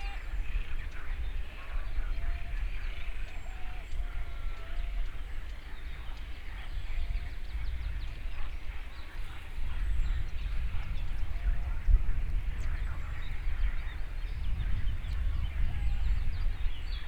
Levada do Norte - break on Levada do Norte
(binaural) rich, peaceful ambience of a vast valley west from Funchal, overlooking Campanario.
Campanario, Portugal, 2 May